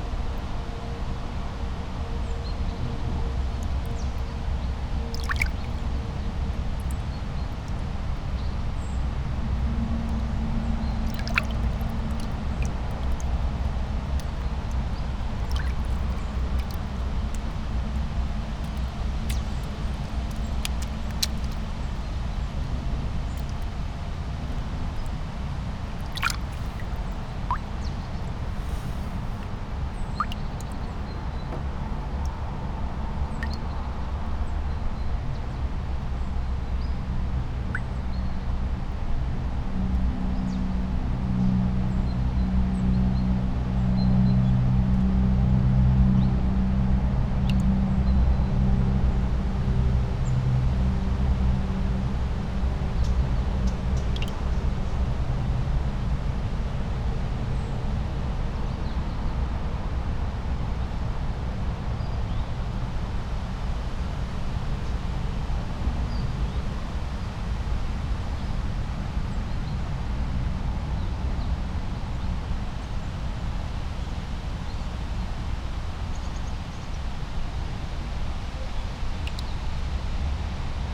brittle pier, Melje, river Drava areas, Maribor - still water
feet, trying to stay in deeply cold water, strong traffic noise all around, birds, kingfisher among others, southwesterly winds through tree crowns